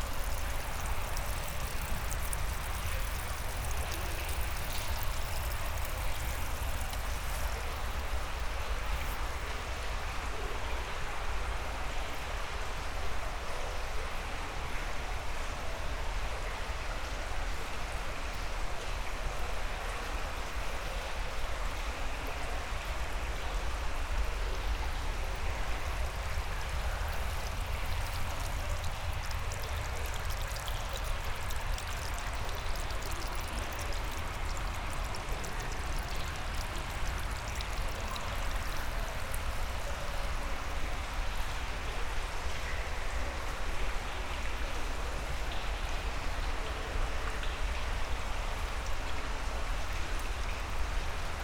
Vilvoorde, Belgium - Dirty underground river
Walking into the underground river Senne, called Zenne in dutch. The Senne river is underground during 11,5 kilometers, crossing all Brussels city. There's 3 tunnels, from Anderlecht to Vilvoorde. Here it's the last tunnel, in the Vilvoorde city. It's very dirty everywhere, will I survive ?
10 December 2017